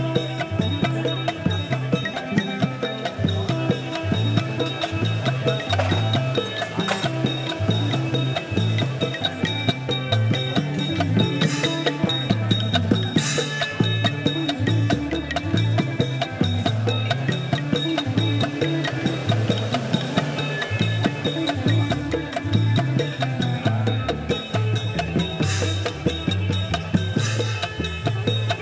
On the beach at Khao Lak. Sarojin House band. Surf and catering sounds too.
Chang Wat Phang-nga, Thailand, 10 March 2017